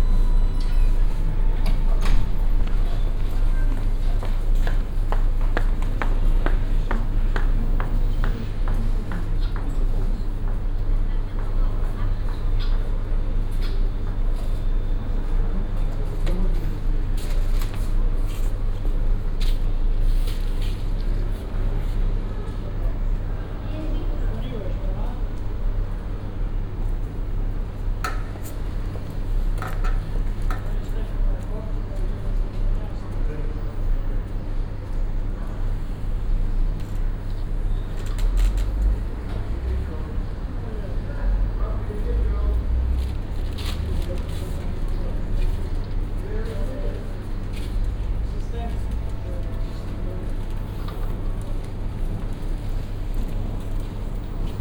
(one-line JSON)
{"title": "Poznan, new bus depot - waiting room", "date": "2014-12-24 15:31:00", "description": "(binaural) waiting room of the new main bus depot in Poznan, located on the ground floor of a big shopping centre. people purchasing tickets for their joruneys. ticket sales person talking to them through a speaker. shopping center sounds coming from afar.", "latitude": "52.40", "longitude": "16.91", "altitude": "76", "timezone": "Europe/Warsaw"}